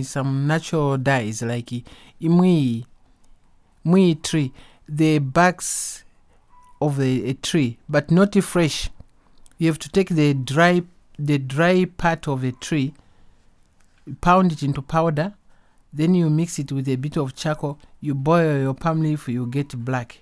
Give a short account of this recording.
I had just come to Zambia for a couple of days, and at the morning of the interview recording, was about to cross the border back to Binga Zimbabwe. I had brought along as a little gift for Esnart, one of the ilala bags by Zubo women; and this is one of the subjects discussed here in conversation. We are comparing the bag produced by Zubo with some other ilala bags, we happen to have at hand. The interview is thus in parts particularly addressed to the Zubo women, as Esnart’s feedback, knowledge sharing and solidarity message to the women in the Zambezi valley.